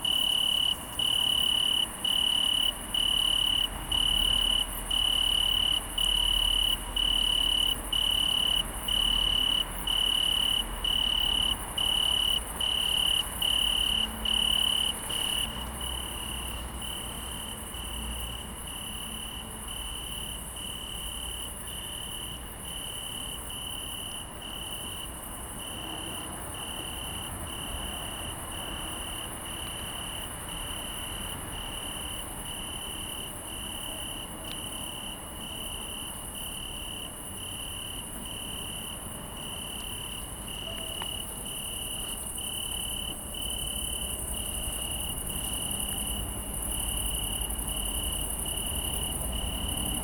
U Plynárny, Praha, Czechia - Italian tree cricket (Oecanthus pellucens)
Italian tree cricket is present in most of Europe, especially in the countries around the Mediterranean. The northern boundary runs through northern France, Belgium, southern Germany, the Czech Republic and southern Poland. Adults can be encountered from July through October. These crickets are mainly nocturnal. The males rub their wings together to produce a subtle but constant. They sing from about five o'clock until three o'clock in the morning. After mating, the female lays her eggs in plant stems, especially in grape. In June the nymphs live in the tissue and leaves of the plant. A few days after the last molt the male begins to sing. The hum is coming from the highway bellow.
Praha, Česko, 6 January 2019